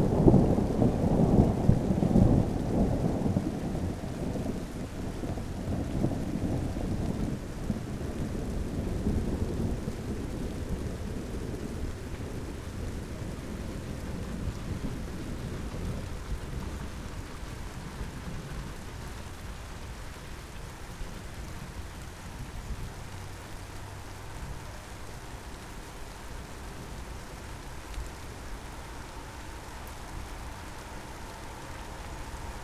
Some distant and semi-loud thunder and rain recorded from my window. Lot's of cars driving by. Zoom H5, default X/Y module.